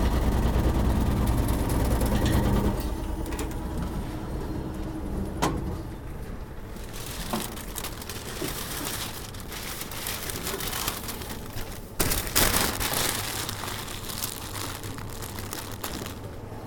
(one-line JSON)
{"title": "Court-St.-Étienne, Belgique - At the supermarket", "date": "2015-09-29 12:10:00", "description": "At the local supermarket, called intermarché. Entering the supermarket, cutting a huge bread and three persons paying at the cashier.", "latitude": "50.65", "longitude": "4.57", "altitude": "61", "timezone": "Europe/Brussels"}